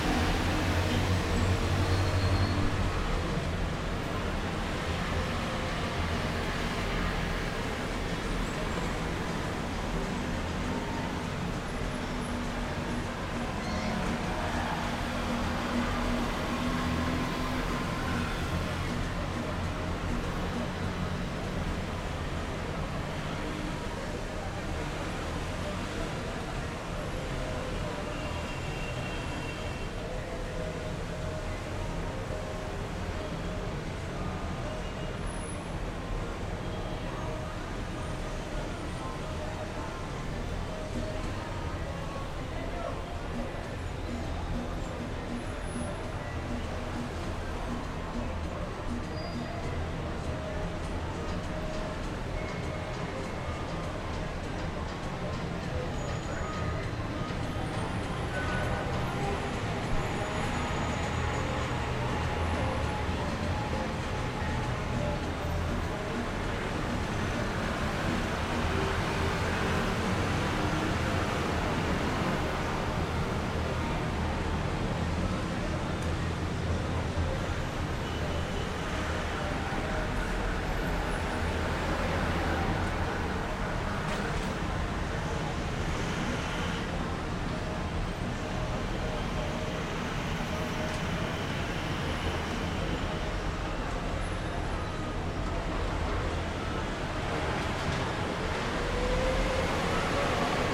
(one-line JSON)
{"title": "Cra., Medellín, Belén, Medellín, Antioquia, Colombia - La 30 A", "date": "2022-09-01 18:02:00", "description": "Principalmente se escucha el ruido de automóviles, motos y buces. Se escucha el sonido de motores y del viento, pitos de diferentes vehículos. Se alcanza a oír como algunas personas hablan. Se alcanza a escuchar música. Silbido.", "latitude": "6.23", "longitude": "-75.61", "altitude": "1551", "timezone": "America/Bogota"}